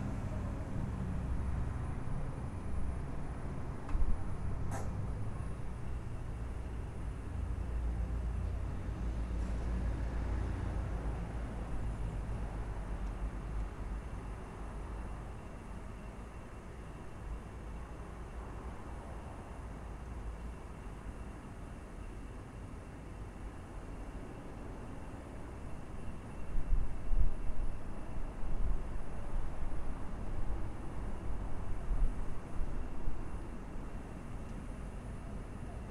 Macuhova ulica, Maribor, Slovenia - corners for one minute
one minute for this corner - macuhova ulica, parking
8 August 2012